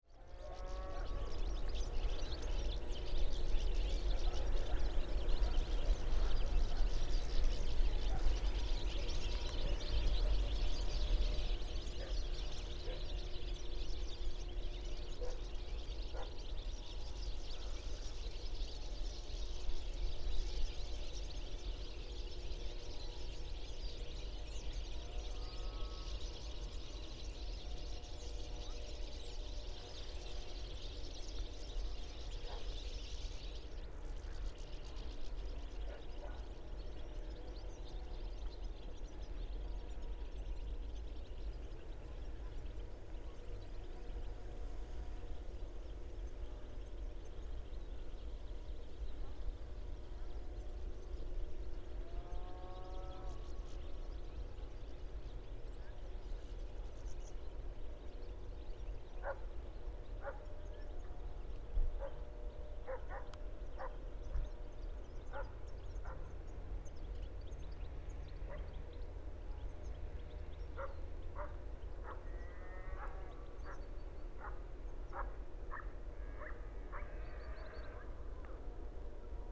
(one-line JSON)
{"title": "in the countryside, Lithuania", "date": "2014-11-19 15:50:00", "description": "just short stop documenting autumnal countryside", "latitude": "55.53", "longitude": "25.56", "altitude": "95", "timezone": "Europe/Vilnius"}